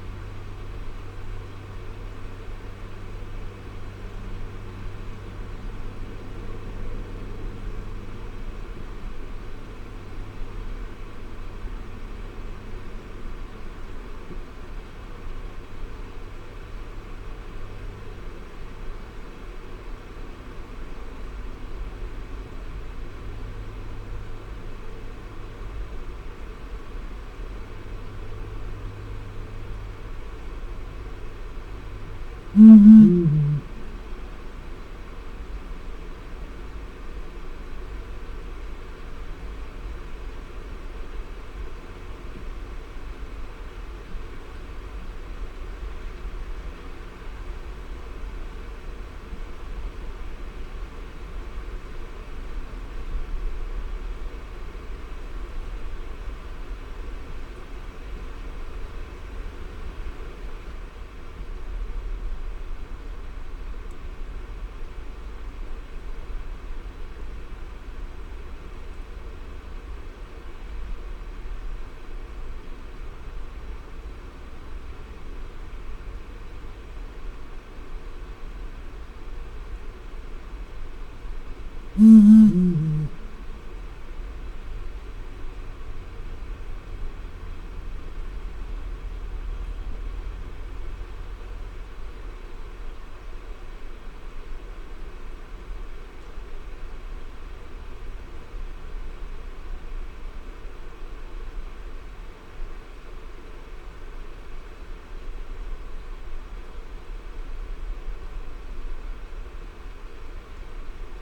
Blakiston's fish owl ... three birds present ... the calls are a duet ... male 1 3 ... female 2 4 ... or male 1 2 ... female 3 4 ... at 05:10 one bird flies off and the separate parts of the duet can be heard ... extremely cold and frequent snow showers ... Teling ProDAT 5 to Sony Minidisk ... just so fortunate to record any of this ...
26 February 2008, 18:30